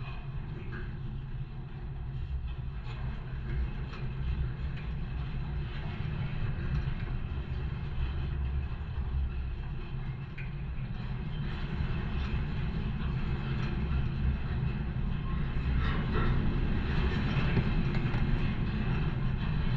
contact microphones on metallic fence in a forest